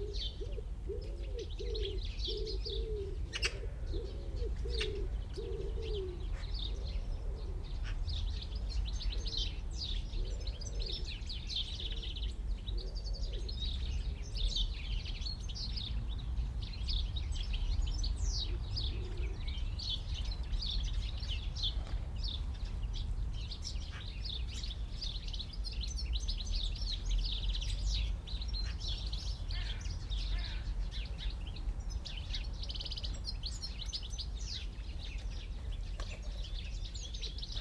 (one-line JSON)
{"title": "Das Nasse Dreieck (The Wet Triangle), wildlife and the distant city in a secluded green space, once part of the Berlin Wall, Berlin, Germany - Magpies very close and intricate twitterings", "date": "2021-03-10 13:12:00", "description": "Unattended (by myself) microphones allow others to come very close at times. In this case the magpie must be in the next tree. This recording has no melodic song birds but is a rhythmic texture of chirps, tweets, twitters, caws and clacks - sparrows, greenfinches, crows, great & blue tits. Trains pass.", "latitude": "52.56", "longitude": "13.40", "altitude": "42", "timezone": "Europe/Berlin"}